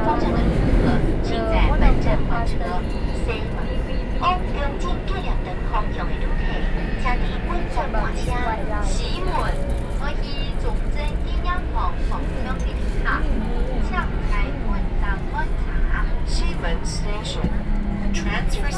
{
  "title": "Wanhua District, Taipei City, Taiwan - In the subway",
  "date": "2012-10-31 19:05:00",
  "latitude": "25.05",
  "longitude": "121.51",
  "altitude": "21",
  "timezone": "Asia/Taipei"
}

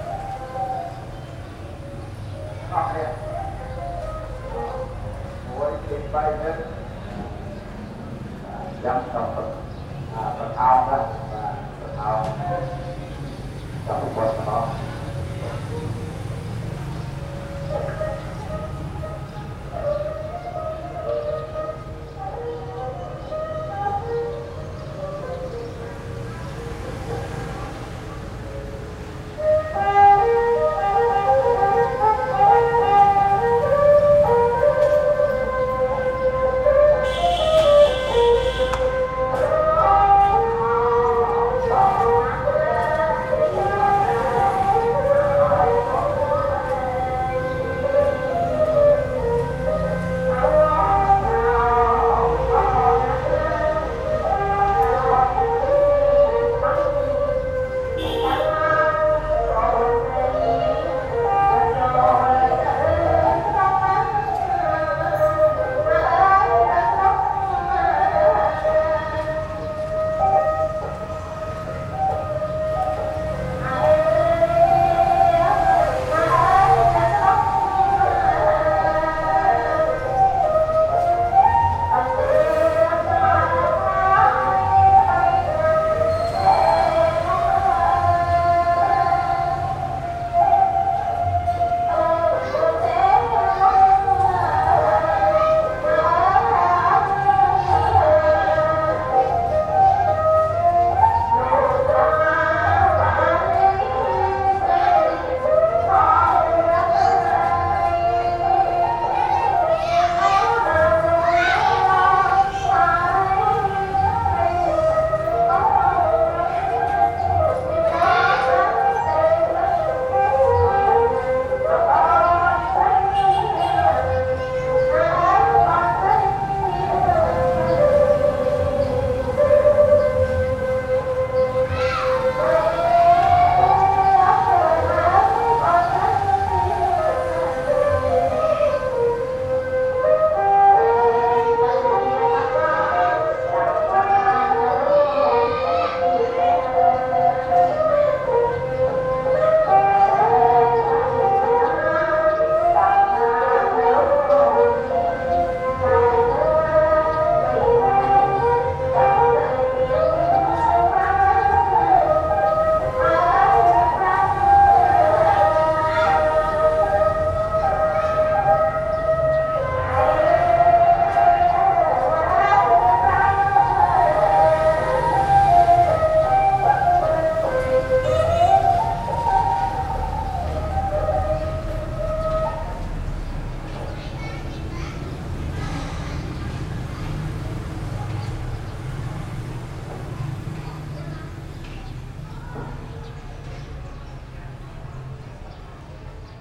{
  "date": "2006-03-23 10:02:00",
  "description": "Phnom Penh, Cambodia, Lyon dOr Terrasse.\nRetour au Cambodge en 2006, à la terrasse du Lyon dOr, tôt le matin, la sono dun mariage, la rue, à deux pas du vieux marché.",
  "latitude": "11.57",
  "longitude": "104.93",
  "altitude": "20",
  "timezone": "Asia/Phnom_Penh"
}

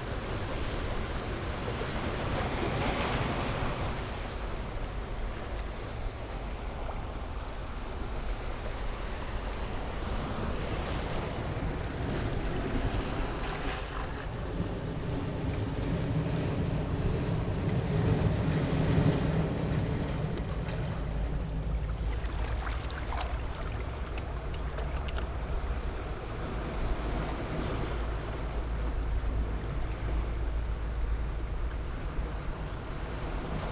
{
  "title": "金沙灣海濱公園, Gongliao District - Sound of the waves",
  "date": "2014-07-21 12:43:00",
  "description": "Coastal, Sound of the waves\nZoom H6 XY mic+ Rode NT4",
  "latitude": "25.08",
  "longitude": "121.92",
  "altitude": "1",
  "timezone": "Asia/Taipei"
}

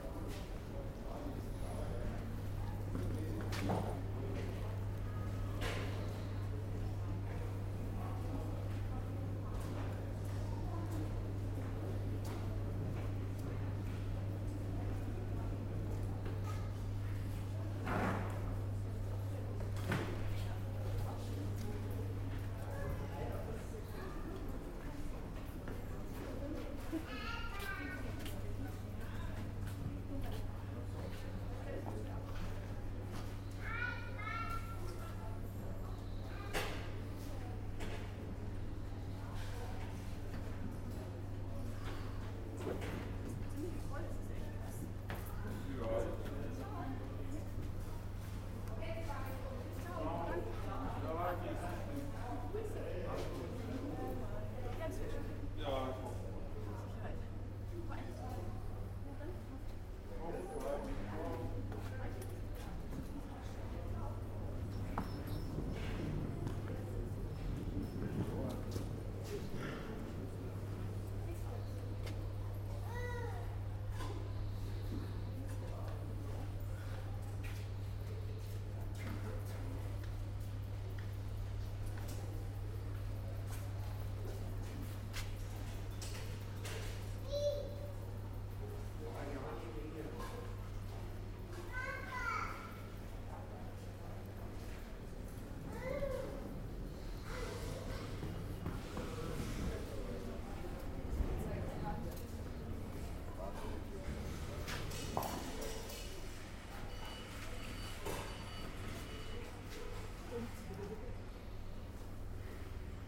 {
  "title": "Rosenheim, main station, entrance hall",
  "description": "recorded june 7, 2008. - project: \"hasenbrot - a private sound diary\"",
  "latitude": "47.85",
  "longitude": "12.12",
  "altitude": "448",
  "timezone": "GMT+1"
}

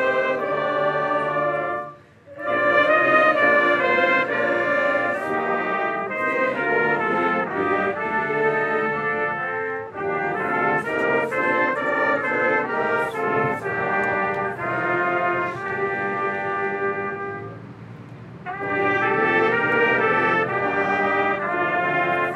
{
  "title": "Hamburg, Deutschland - Demonstration",
  "date": "2019-04-19 12:45:00",
  "description": "Hauptkirche St. Petri & Speersort. A small demonstration in the street. One hundred people want to welcome refugees in Germany. Catholic speech and religious song.",
  "latitude": "53.55",
  "longitude": "10.00",
  "altitude": "10",
  "timezone": "GMT+1"
}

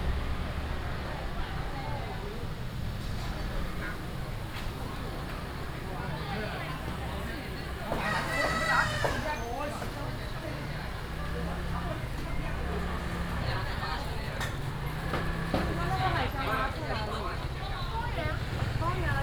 {
  "title": "Ben St., Dongshi Dist., Taichung City - in the traditional market",
  "date": "2017-09-19 07:30:00",
  "description": "Walking in the traditional market, vendors peddling, traffic sound, Brake sound, Binaural recordings, Sony PCM D100+ Soundman OKM II",
  "latitude": "24.26",
  "longitude": "120.83",
  "altitude": "362",
  "timezone": "Asia/Taipei"
}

